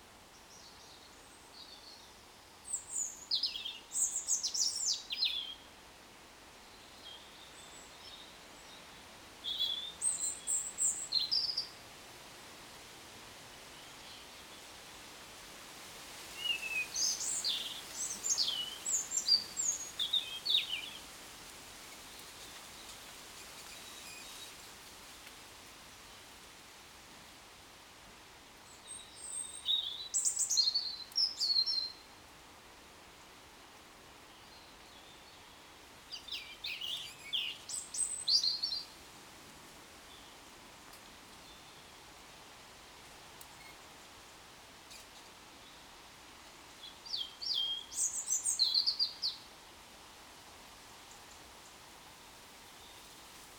Butterfield Green, Allen Rd, Stoke Newington, London, UK - Blackbirds
Blackbirds dawn chorus before sunrise. Kit used: a pair of DPA4060's in a Rycote + MixPre6.
19 November 2018, ~05:00